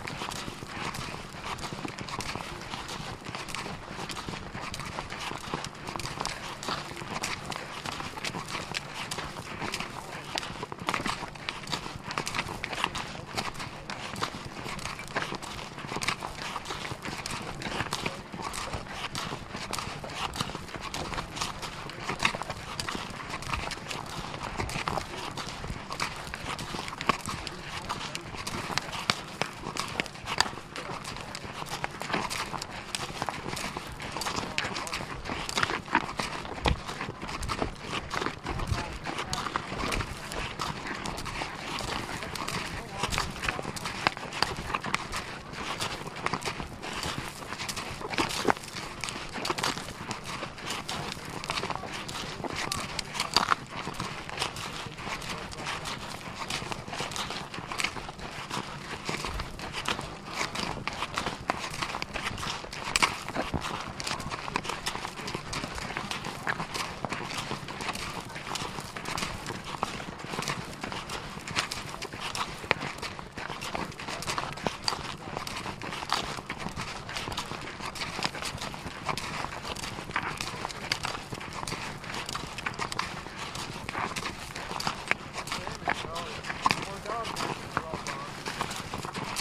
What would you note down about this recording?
Metabolic Studio Sonic Division Archives: Recording of mule hoofs taken during "100 Mules Walk the Los Angeles Aqueduct. Recorded with two Shure VP64 microphones attaches to either side of saddle on one mule